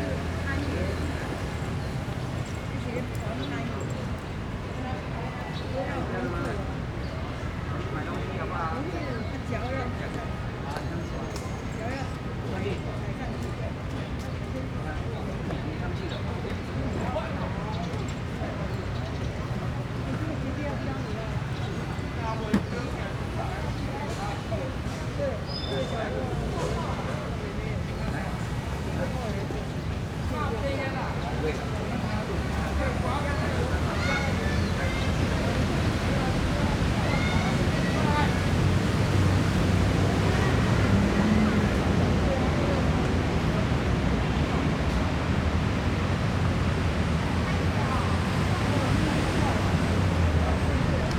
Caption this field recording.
in the Park, Children and Old people, Traffic Sound, Rode NT4+Zoom H4n